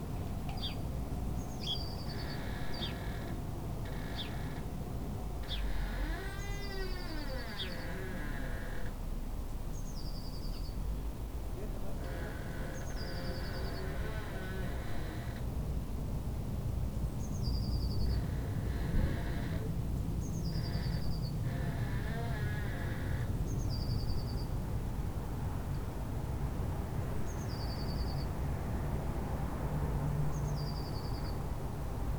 berlin: dorotheenstädtischer friedhof - the city, the country & me: dorotheenstadt cemetery, squeaking flagstaff
squeaking flagstaff from a nearby hotel, birds, traffic noise of hannoversche straße
the city, the country & me: april 10, 2011
Berlin, Germany, April 2011